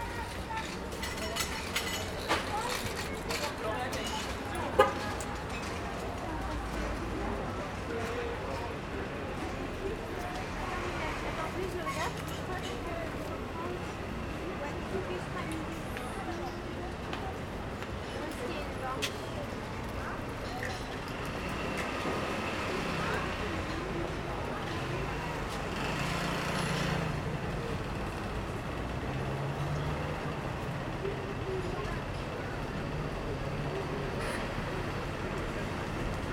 Rue du Commerce 75015 - Café recording - 'A La Tour Eiffel'
Recording the street ambience at 'A La Tour Eiffel' Café - Zoom H1
Paris, France